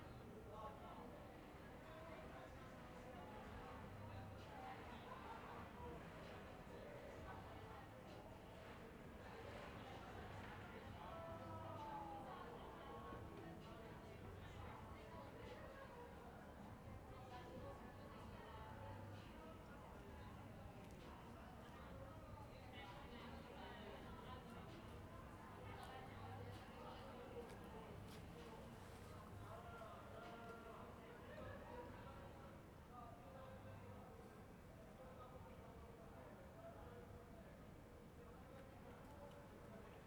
Ascolto il tuo cuore, città. I listen to your heart, city. Several chapters **SCROLL DOWN FOR ALL RECORDINGS** - Round Midnight April Friday with pipes sounds in the time of COVID19: soundscape.
"Round Midnight April Friday with pipes sounds in the time of COVID19": soundscape.
Chapter CLXVIII of Ascolto il tuo cuore, città. I listen to your heart, city
Friday, April 16th, 2021. Fixed position on an internal terrace at San Salvario district Turin, at the end I play some plastic and metal pipes (for electrical installation).
One year and thirty-seven days after emergency disposition due to the epidemic of COVID19.
Start at 11:58 p.m. end at 00:15 a.m. duration of recording 16’48”
Torino, Piemonte, Italia